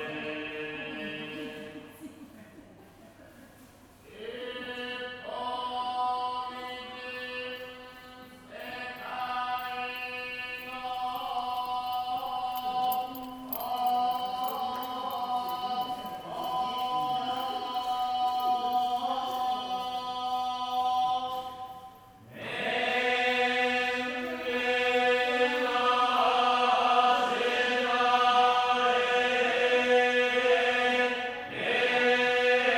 Buddhist monks doing their chants in a temple. (roland r-07)